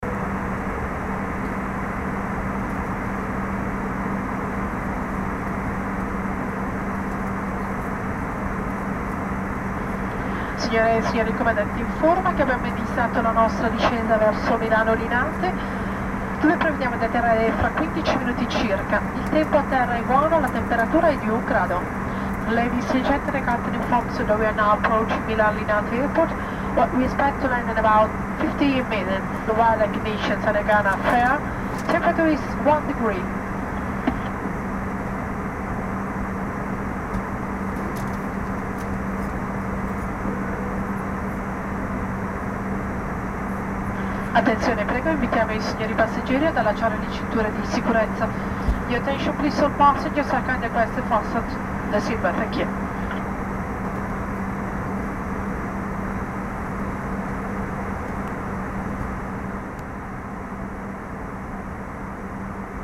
in aereo da Palermo a Milano stiamo cominciando la disceda per Linate.(Romanlux) edirol r-09hr